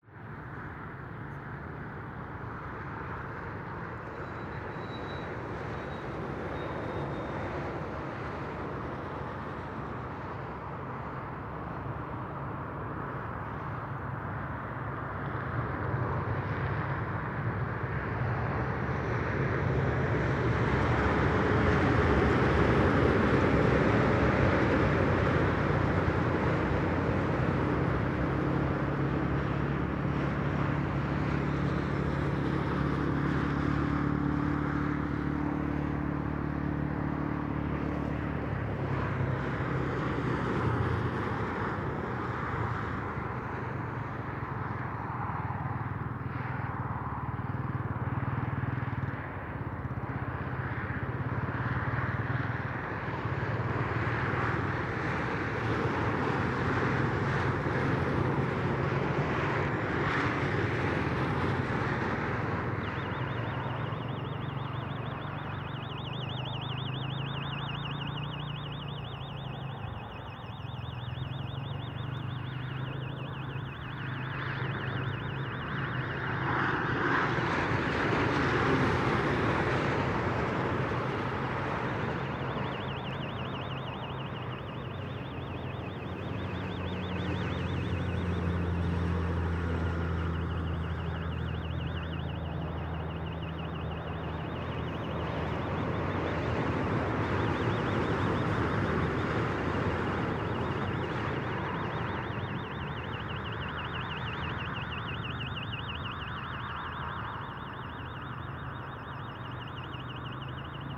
Recorriendo el Camino de Hueso, desde los límites rurales de Mercedes hasta la Ruta Nacional 5
Buenos Aires, Argentina, 17 June 2018